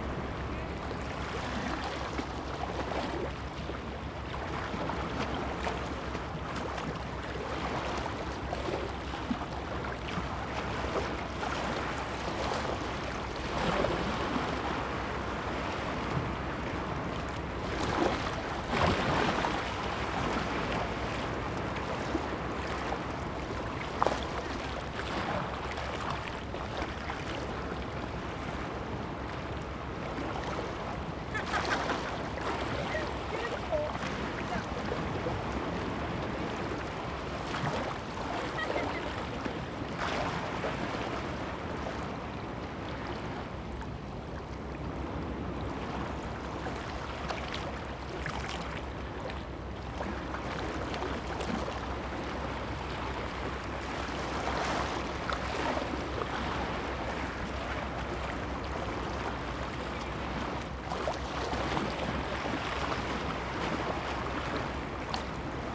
Gushan District, Kaohsiung - The waves move
Sound of the waves, Beach
Zoom H2n MS+XY